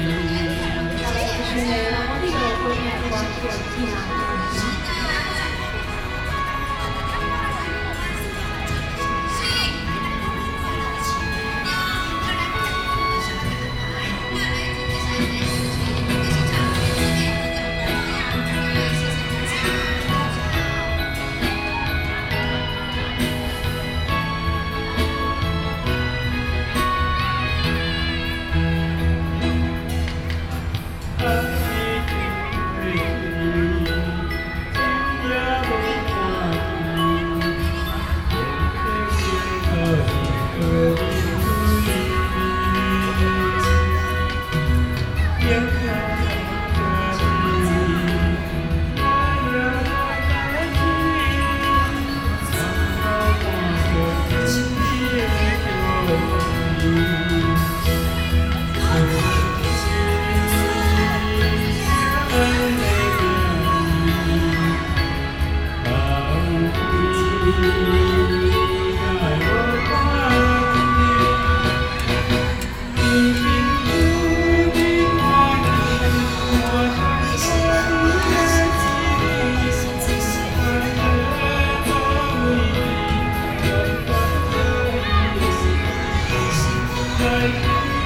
Beitou, Taipei City - Community party
Community party, Children playing in the park, Park next evening activities, Sony PCM D50 + Soundman OKM II
Taipei City, Taiwan